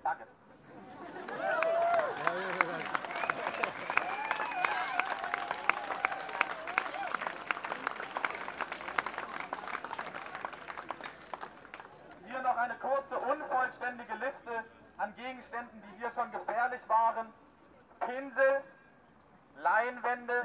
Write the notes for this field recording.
preparation for demonstration, a speaker announces some requirements and program details. (Sony PCM D50)